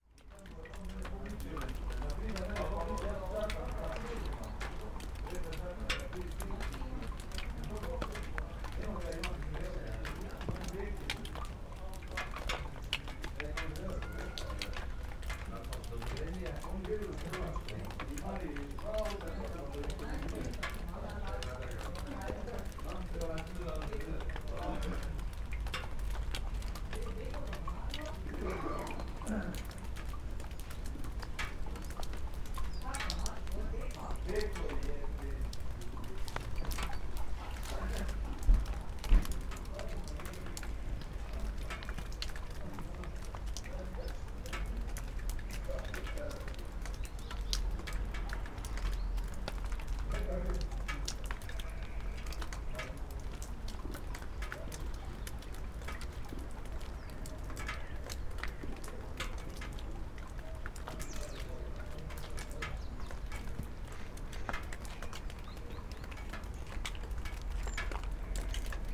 drops (and brick) dripping from a damaged old roof onto different kind of things - pipes, stones, jars, toad - human and sheep voices from afar
Maribor, Slovenia, 31 October 2012, 16:08